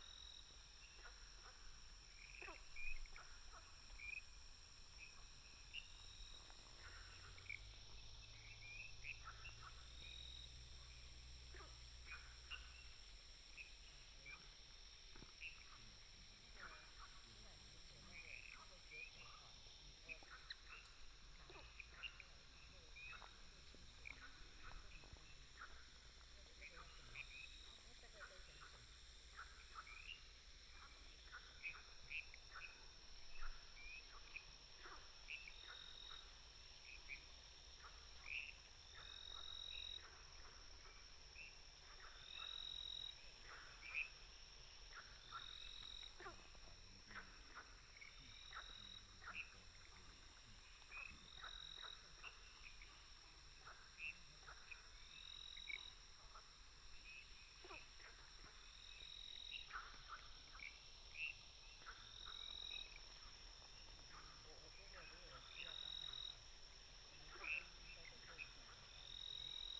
Frogs chirping, Firefly habitat area
Puli Township, 華龍巷164號